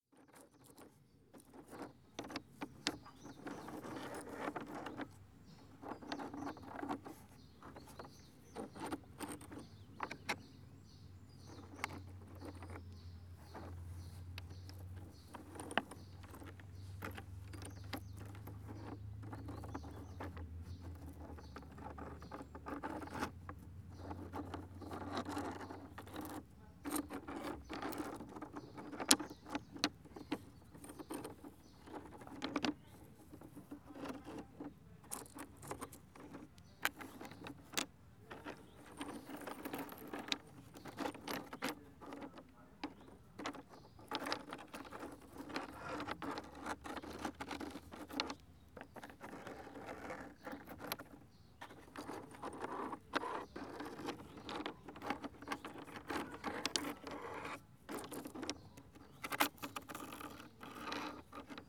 a plastic bucket full of stones. rough ones, smooths ones, dusty, clean. rummaging. writing stone sentences. tapping asymmetric rhymes. causing type three word twists. lying down a rocky lines.
Srem, Poland, 24 May 2014, 18:41